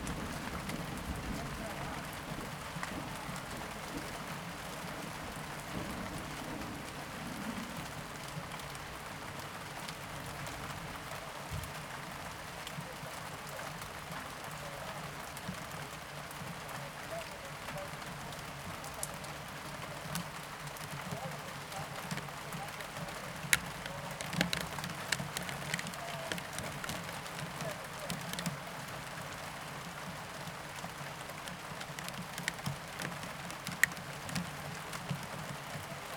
Ascolto il tuo cuore, città. I listen to your heart, city. Several chapters **SCROLL DOWN FOR ALL RECORDINGS** - Wednesday afternoon with storm and rain in the time of COVID19 Soundscape
"Wednesday afternoon with storm and rain in the time of COVID19" Soundscape
Chapter XCVI of Ascolto il tuo cuore, città. I listen to your heart, city
Wednesday, June 3rd 2020. Fixed position on an internal terrace at San Salvario district Turin, eighty-five days after (but day thirty-one of Phase II and day eighteen of Phase IIB and day twelve of Phase IIC) of emergency disposition due to the epidemic of COVID19.
Start at 5:02 p.m. end at 5:48 p.m. duration of recording 45’32”
Piemonte, Italia, June 2020